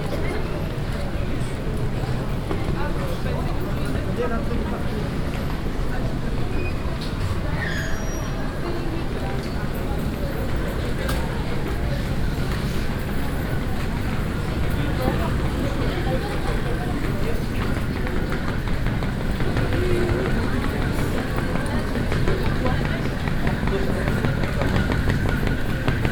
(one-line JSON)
{"title": "marseille, airport, hall 1", "date": "2011-08-28 14:06:00", "description": "At the arrival zone of Marseille airport. The sound of suitcases with different type of transportation roles inside the crowded hall and different type of steps on the stone plated ground.\ninternational city scapes - topographic field recordings and social ambiences", "latitude": "43.44", "longitude": "5.22", "altitude": "13", "timezone": "Europe/Paris"}